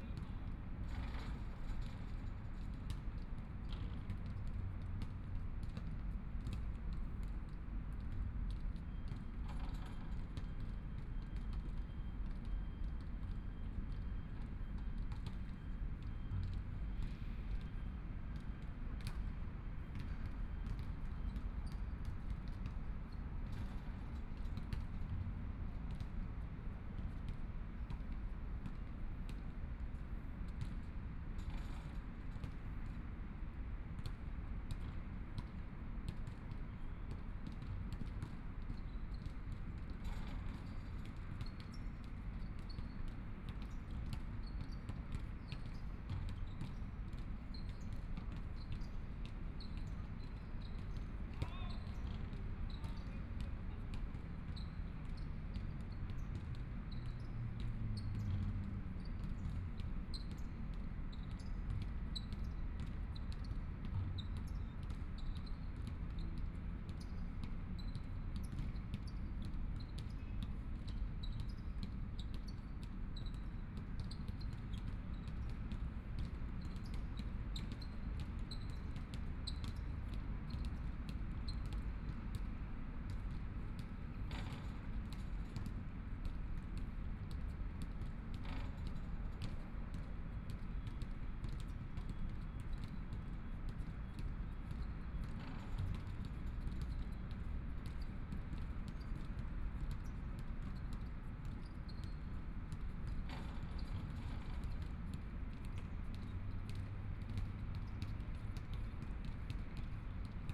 Sitting in the park, Traffic Sound, Playing basketball voice
Binaural recordings
Zoom H4n+ Soundman OKM II